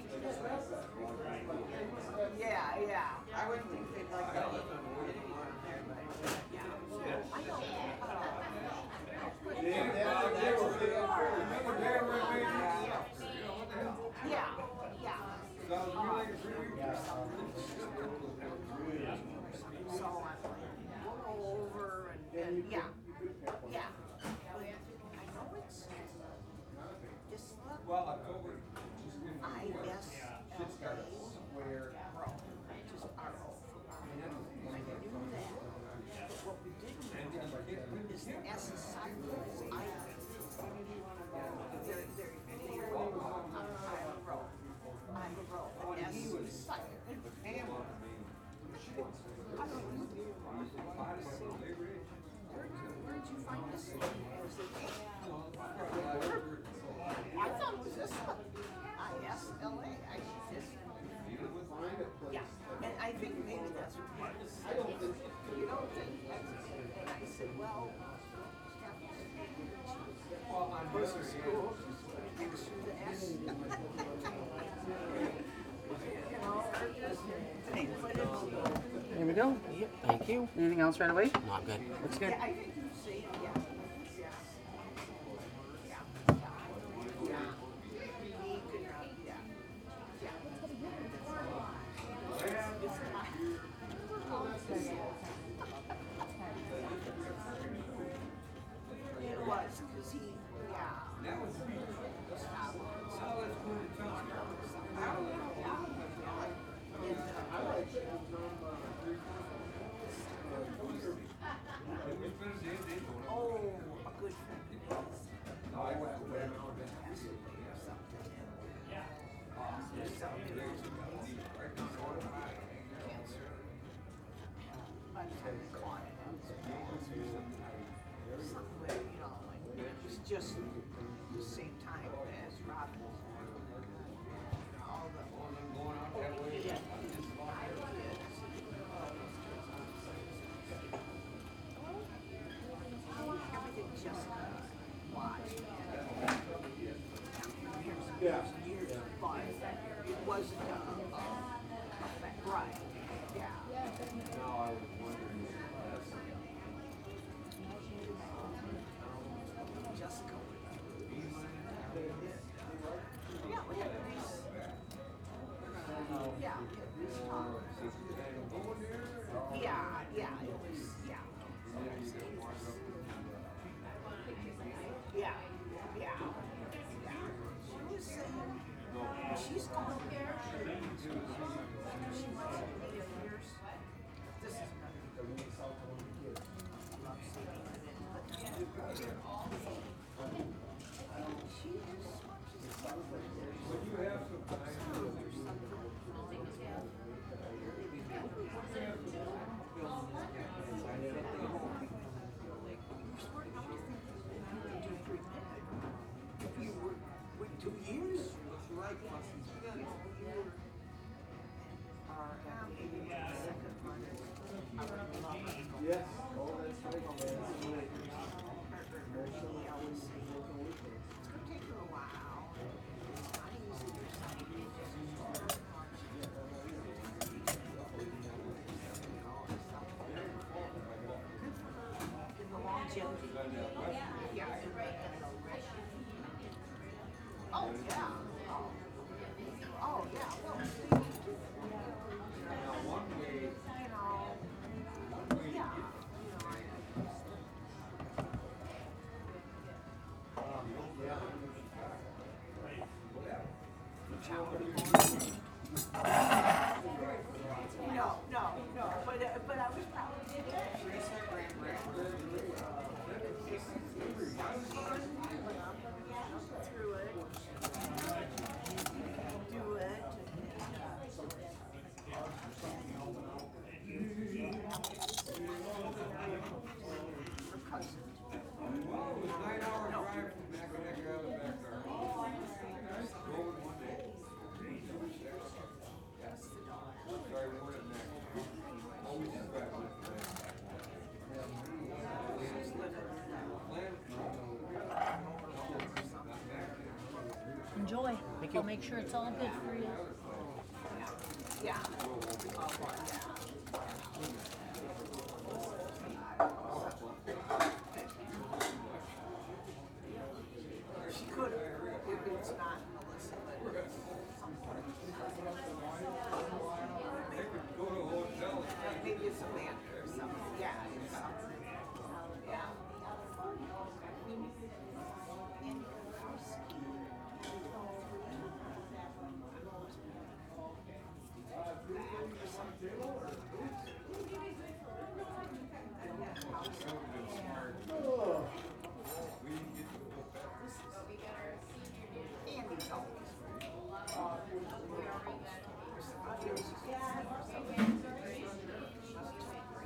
Jimmy's Food and Drink - Lunch at Jimmy's
The sound of having lunch at Jimmy's Food and Drink